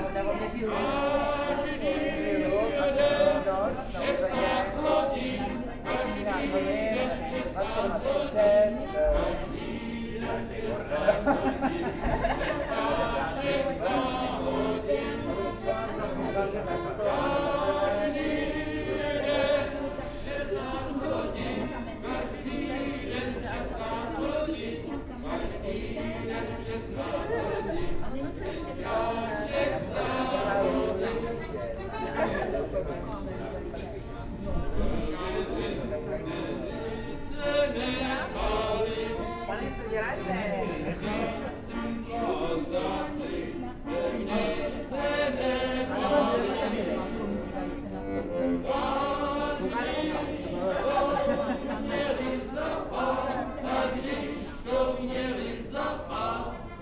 Kájovská, Vnitřní Město, Český Krumlov, Czechia - Locals sing in a pub in Český Krumlov
Recording of a song played on accordion and sung by locals in a pub. They were inside of pub with open doors and windows, recorded from the street.
Jihozápad, Česká republika